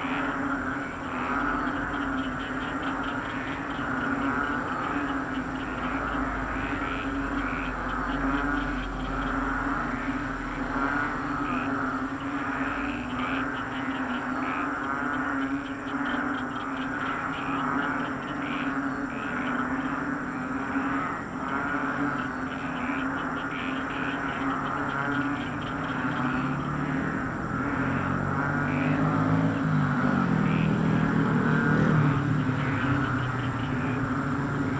San Francisco, Biñan, Laguna, Filippinerna - Biñan Palakang Bukid #2
After som heavy rain in the evening before, there is full activity of the frogs in the field nearby still in the morning after! I belive several hundreds of them in chorus, accompanied by morning commuting on the nearby Halang Rd with tricycles, cars and motorcycles. Palakang bukid is the filipino name of this frog.